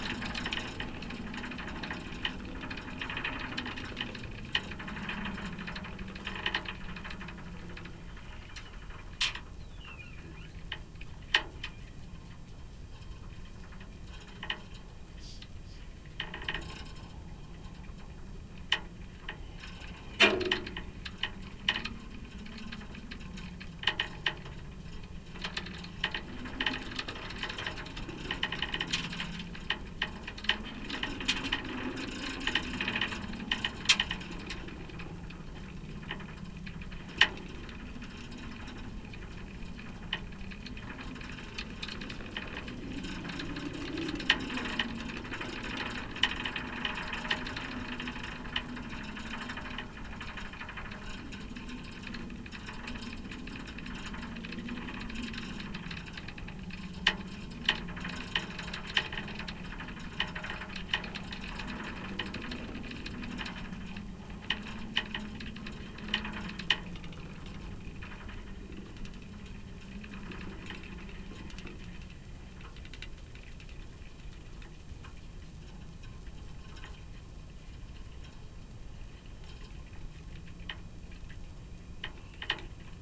contact mic on babystay
the city, the country & me: july 8, 2011
Workum, The Netherlands, 8 July 2011